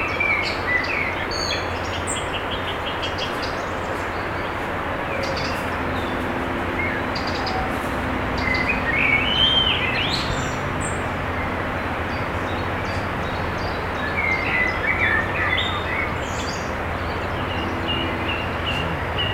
Houlgate, France - Birds Houlgate
Birds in a little forest in front of the beach, Houlgate, Normandy, France, Zoom H6